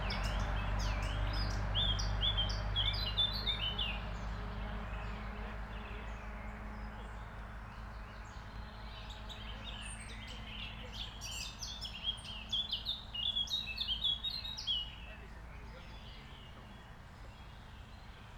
Strzeszyn district, Koszalinska Street - vintage car
Originally wanted to record muffled sounds of a radio playing in a parked car. You can hear a bit a the beginning of the recording. But the traffic was heavy and the person left the car anyway. Kept recording for a minutes. Mainly cars passing by, a few strollers. All of the sudden a vintage car appeared from a forest road - chugging engine and a weird horn. (sony d50)